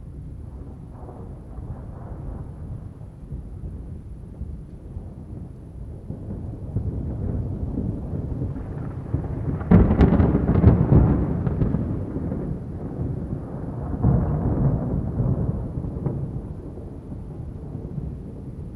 Rue Claude Delaroa, Saint-Étienne, France - St-Etienne - orage d'été
St-Etienne (Loire)
Orage d'été - soir